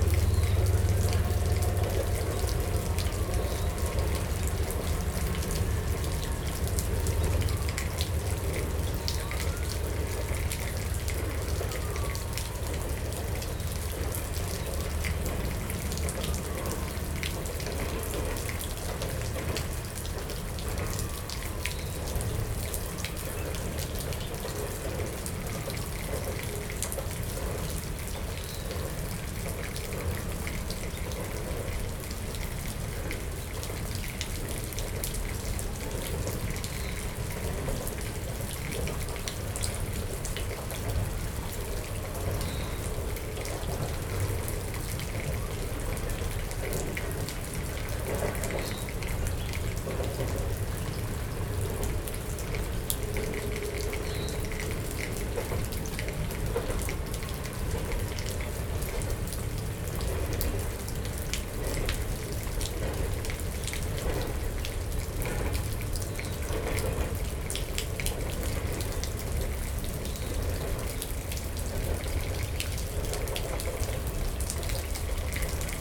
{"title": "Paderewski Dr, Buffalo, NY, USA - Abandoned Walkway at Buffalo Central Terminal - Dripping Rain & Distant Trains", "date": "2020-05-18 19:28:00", "description": "Buffalo Central Terminal was an active station in Buffalo, New York from 1929 to 1979. Now abandoned, much of the building infrastructure remains and there is active railroad use nearby. This recording is with a H2N as rain falls through the holes in the ceiling of the abandoned walkway and a few trains rumble slowly by in the near distance. City sounds (cars, sirens) can be heard as well (and also a swooping seagull).", "latitude": "42.89", "longitude": "-78.83", "altitude": "187", "timezone": "America/New_York"}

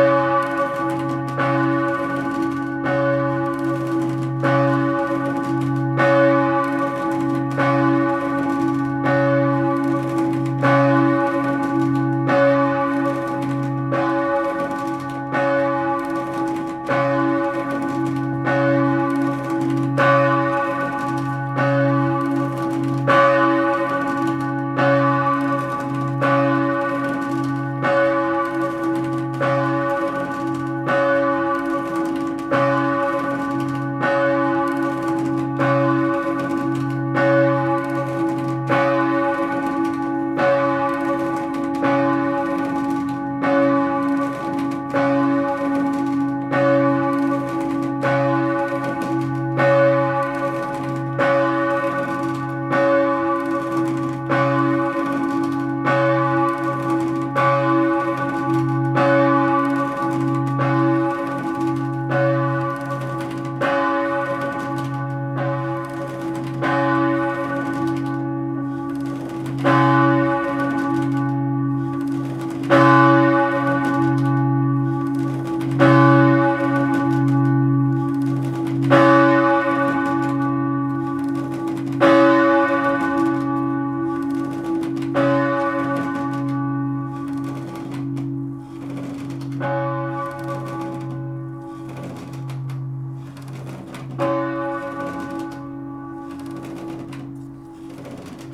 {"title": "Montée Notre Dame du Château, Allauch, France - appel à loffice de 9h00", "date": "2019-04-27 09:00:00", "description": "la cloche appelle les fidèles pour la messe de 9h00\nthe bell calls the faithful for the Mass of 9:00", "latitude": "43.34", "longitude": "5.49", "altitude": "308", "timezone": "Europe/Paris"}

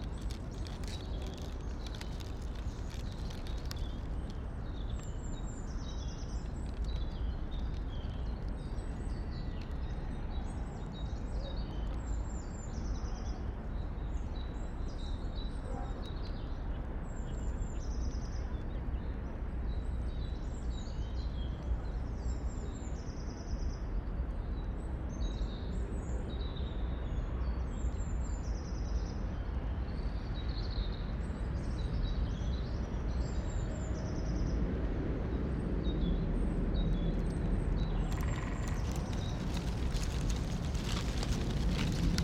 {"title": "Braník woodland, a stormy night, rain and wind, Nad Údolím, Praha, Czechia - Dawn birds, woodpeckers and a heavy train", "date": "2022-04-08 06:31:00", "description": "Recorded from the stream. A tram moans in the valley below. Wind gust rustle the dry leaves and traffic noise grows. A longer close train rumbles and rattles past. It’s bass frequencies are quite heavy. Robins and great tits continue to sing and woodpeckers (probably great spotted woodpeckers) have started drumming on two different trees – higher and lower pitched. At dawn most birds sing but there is an order to when each species starts. Woodpeckers seem to be later than others.\nListening over time this woodland has a reasonable diversity of birds. But the constant traffic creates a sonic fog that makes them difficult to hear. I wonder if this effects how they hear each other.", "latitude": "50.03", "longitude": "14.41", "altitude": "212", "timezone": "Europe/Prague"}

